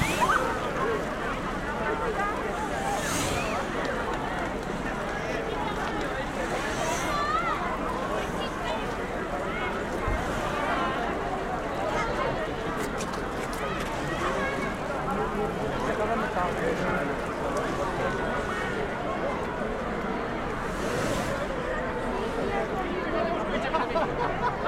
Paisatge sonor de la plaça En Blasc d'Alagó durant l'encesa de la barraca de Sant Antoni 2022.